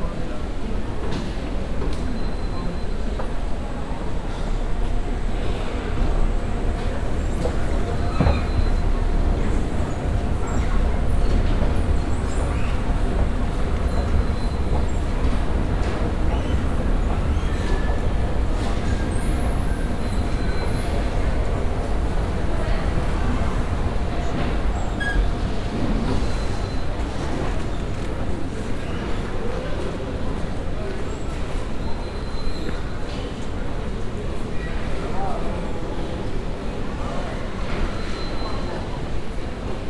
paris, centre pompidou, main hall
ambience in the huge main hall of the centre pompidou. a constant digital beep and steps and voices in the wide open glass wall and stone floor reverbing hall. at the end moving stairs to the first floor level.
international cityscapes - sociale ambiences and topographic field recordings
Paris, France, 16 October